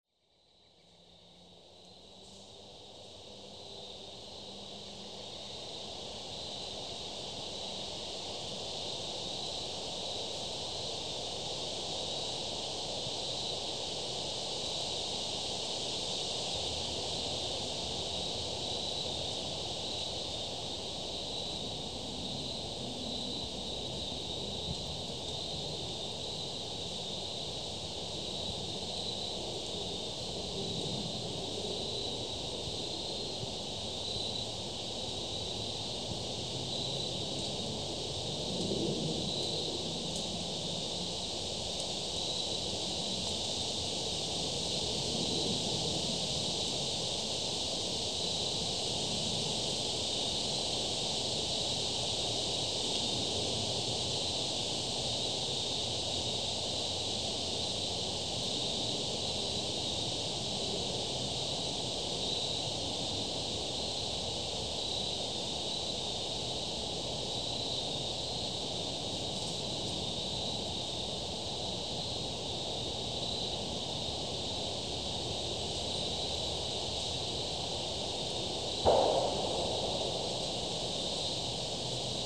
Recorded on a hiking trail at dusk with a Tascam DR-40. A series of gunshots can be heard from the nearby shooting range.
MD, USA, 9 October 2016